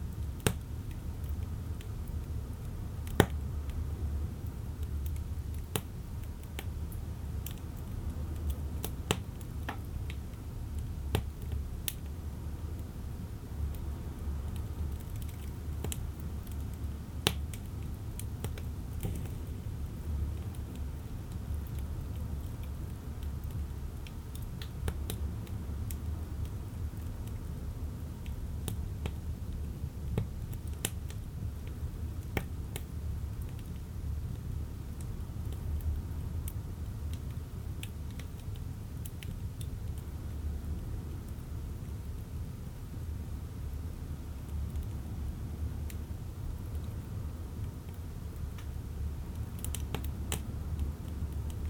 The stove in the fishing hut, White Sea, Russia - The stove in the fishing hut
The stove in the fishing hut.
Звук печи в рыбацкой избе, на улице шторм.